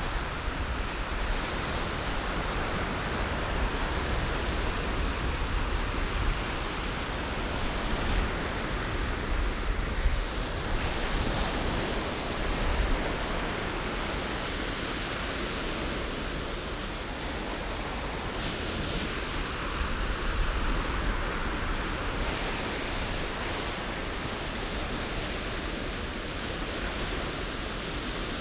audresseles, meeresufer bei ebbe, brandungswind
am meeresufer bei ebbe, morgens, die wellenamplituden in rauschigen intervallen, dazu stetiger auflandiger wind
fieldrecordings international:
social ambiences, topographic fieldrecordings